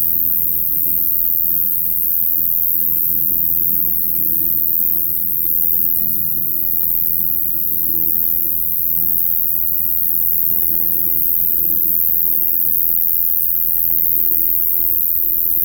at the edge of a city park, Maribor - night cricket
on a night the day before this ”electrified" cricket was accompanying rain near mournful willow tree, night after he moved his location from the meadow, that was meantime cut, to the bushy area close to the edge of a park